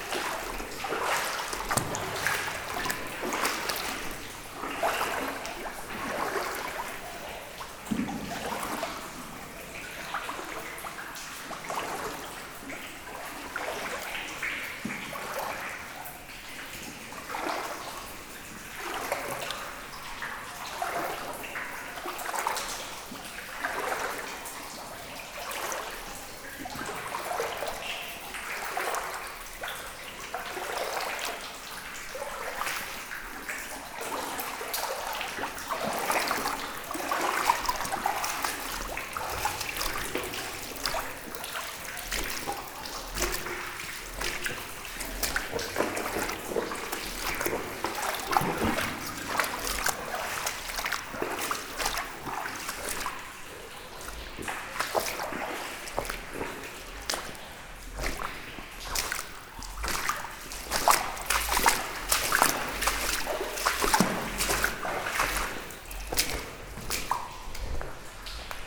Neufchef, France - Walking in the underground mine
Walking into the underground mine. There's a very strong lack of oxygen in this interesting place. It's difficult for me. At the end of the recording, I'm walking in a ultra-thick layer of calcite.
Ranguevaux, France, October 2016